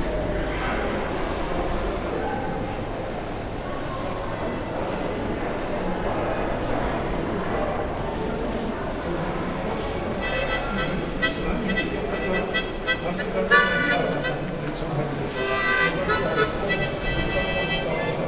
musician playing in Spittelmarkt jrm
Berlin, Germany